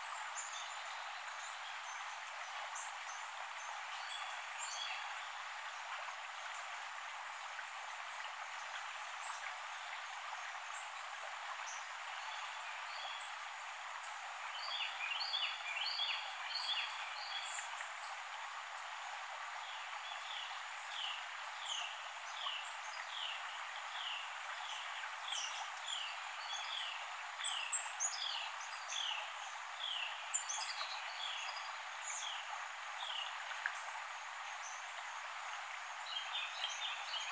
A recording taken on the banks of Antietam Creek just south of the final battle of Antietam.
Sharpsburg, MD, USA, 18 September 2016